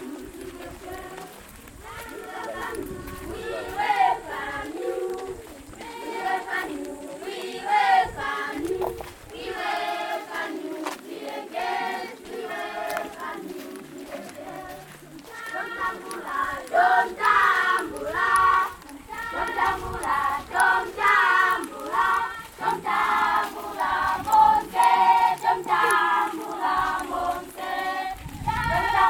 {
  "title": "Damba Primary School, Binga, Zimbabwe - We welcome you...",
  "date": "2012-11-05 10:06:00",
  "description": "…we are witnessing an award ceremony at Damba Primary School, a village in the bushland near Manjolo… the village and guest are gathered under the largest tree in the school ground… pupils are marching into the round in a long line welcoming all singing …",
  "latitude": "-17.71",
  "longitude": "27.45",
  "altitude": "613",
  "timezone": "Africa/Harare"
}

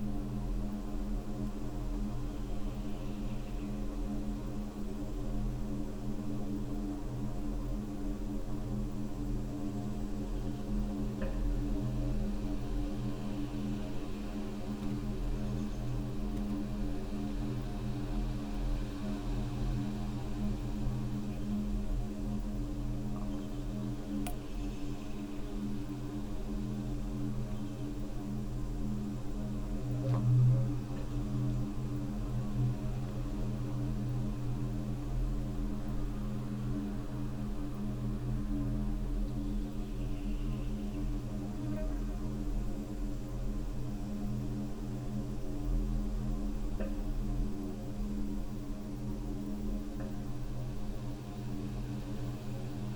{
  "title": "quarry, Marušići, Croatia - void voices - stony chambers of exploitation - borehole",
  "date": "2013-07-13 17:22:00",
  "latitude": "45.42",
  "longitude": "13.74",
  "altitude": "269",
  "timezone": "Europe/Zagreb"
}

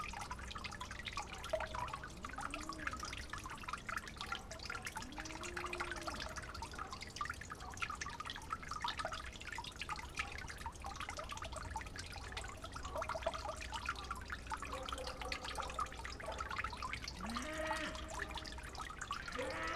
{
  "title": "Lithuania, Utena, evening at the dripping tube",
  "date": "2011-11-08 17:30:00",
  "description": "dripping tube in the fields, hungry cow, tractors and towns hum in the distance",
  "latitude": "55.51",
  "longitude": "25.55",
  "altitude": "112",
  "timezone": "Europe/Vilnius"
}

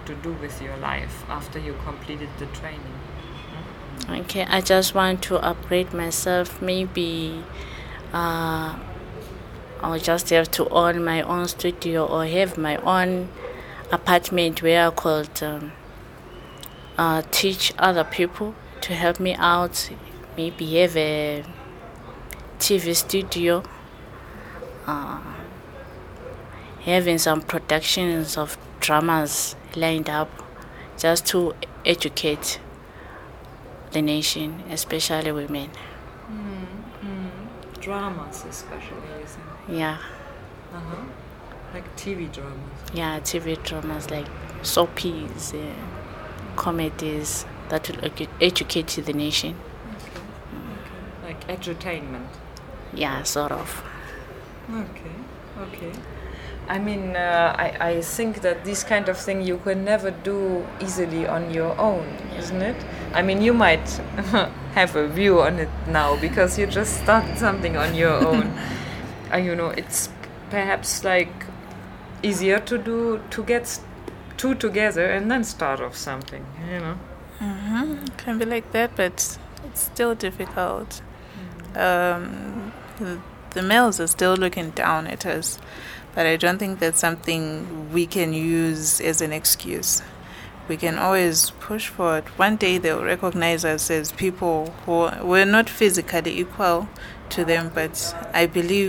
{
  "title": "Makokoba, Bulawayo, Zimbabwe - Thembele and Juliette, two young filmmakers talk their dreams….",
  "date": "2012-10-26 16:46:00",
  "description": "We made this recording in a sculptor’s studio at the far end of NGZ’s big courtyard, sitting between large metal bits of sculptures… Thembele Thlajayo and Juliette Makara are two young filmmakers trained at Ibhayisikopo Film Academy by Priscilla Sithole. Here they talk about their new perspectives on life after the training and the dreams they are pursuing now as filmmakers…\nThe full interview with Thembele and Juliette is archived here:",
  "latitude": "-20.15",
  "longitude": "28.58",
  "altitude": "1351",
  "timezone": "Africa/Harare"
}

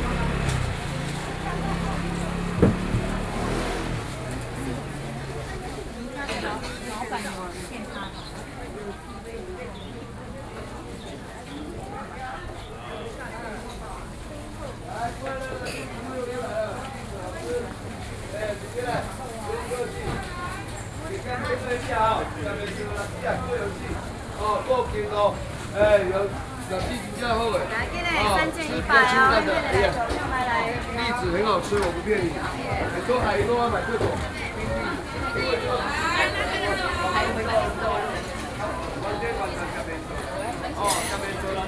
{
  "title": "Sec., Wenhua Rd., Banqiao Dist., New Taipei City - Traditional markets",
  "date": "2012-11-03 09:28:00",
  "latitude": "25.02",
  "longitude": "121.47",
  "altitude": "19",
  "timezone": "Asia/Taipei"
}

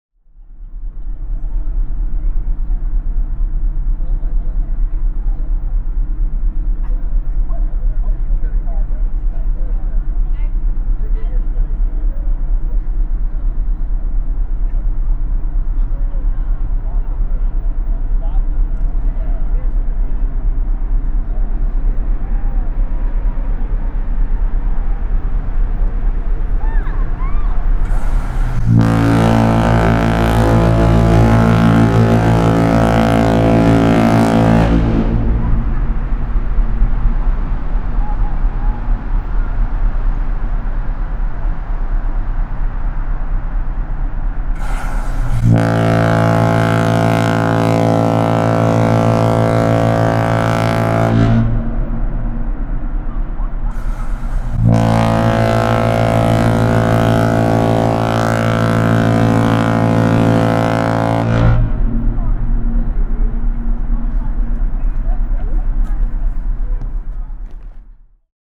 {
  "title": "Ships Whistle Blast under the Verrazzano-Narrows Bridge, Brooklyn, NY, USA - Queen Mary 2",
  "date": "2019-11-06 18:35:00",
  "description": "Recorded facing towards the stern on the top deck under the funnel of the ship. The Verrazzano Narrows suspension bridge is fast approaching. The funnel will slip under it with a few metres to spare. The anticipation is always fun for those on the top deck. If you listen carefully in the last second or two before the whistle you can hear the approaching bridge and the first breath of air before the main blast. Once under the bridge the lights of Manhattan and Brooklyn fall away rapidly and then The Atlantic.",
  "latitude": "40.61",
  "longitude": "-74.05",
  "timezone": "America/New_York"
}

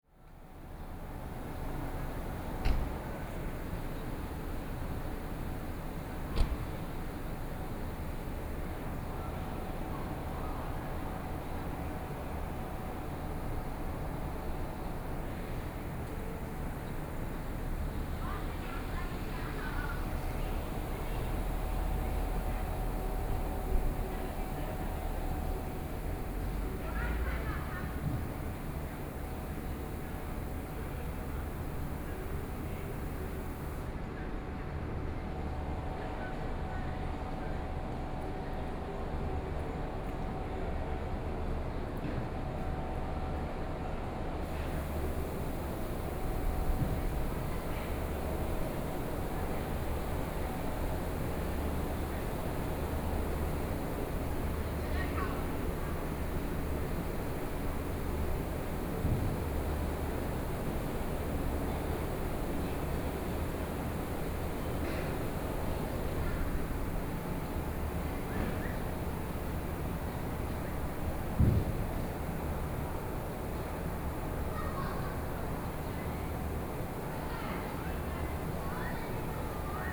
{
  "title": "員山鄉內城村, Yilan County - Air conditioning noise",
  "date": "2014-07-25 13:35:00",
  "description": "Sightseeing, Air conditioning noise\nSony PCM D50+ Soundman OKM II",
  "latitude": "24.71",
  "longitude": "121.68",
  "altitude": "45",
  "timezone": "Asia/Taipei"
}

